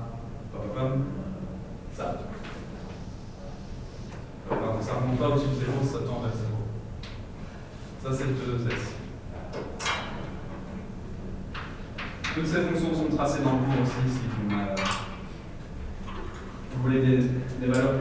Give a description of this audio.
A course of physical science. It looks like complicate and nobody's joking.